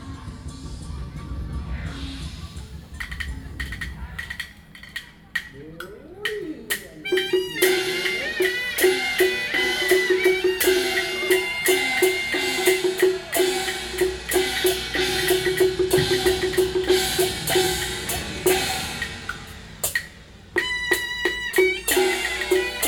新興公園, Beitou District, Taipei City - Glove puppetry